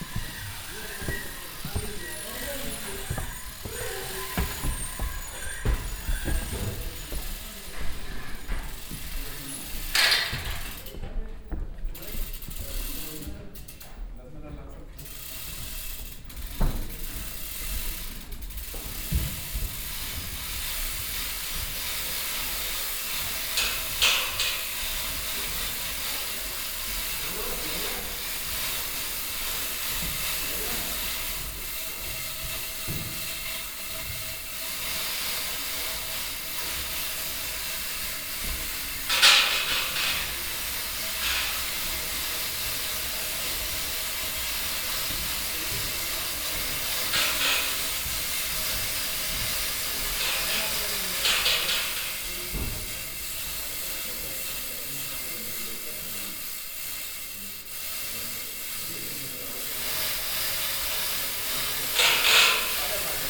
nürnberg, kongresshalle, theatre
inside the kongresshalle in the theatre while stage set up. - the sounds of chains lifting up a construction
soundmap d - social ambiences and topographic field recordings
kongresshalle, Bayernstr, 23 April